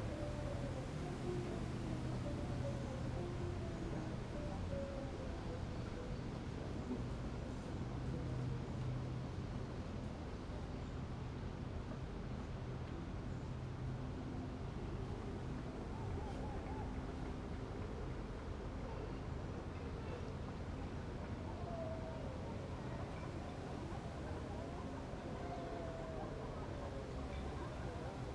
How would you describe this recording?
Berlin Stralau, Spree river bank ambience, various traffic: waterplane starting, joggers, bikers, boats